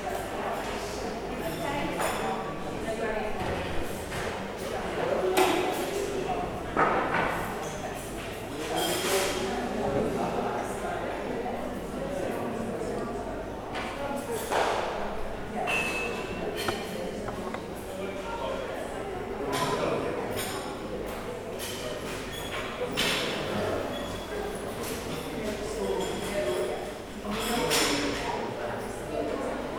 Buckfast Abbey, Buckfastleigh, UK - Buckfast Abbey canteen
This recording was made in the Abbey canteen which has a high ceiling and no soft furnishings. It was about a third full. Recorded on a Zoom H5.